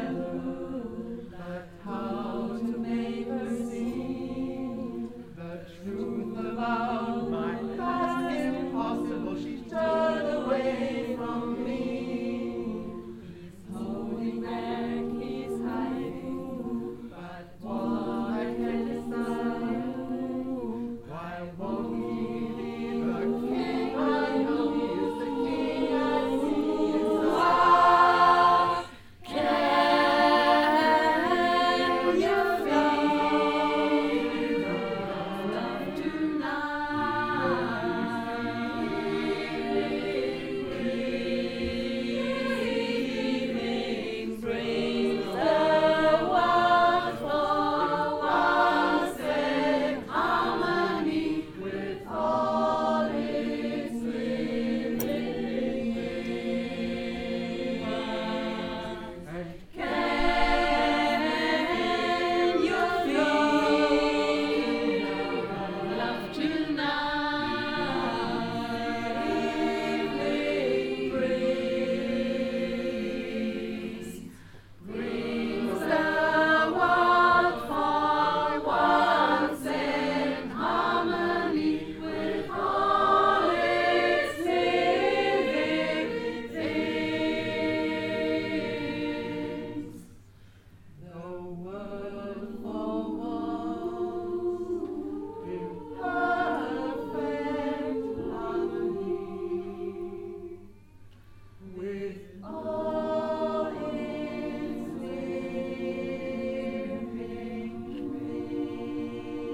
cologne, filmhaus, filmhaus choir
first performance of the cologne based filmhaus choir conducted by guido preuss - recording 01
soundmap nrw - social ambiences and topographic field recordings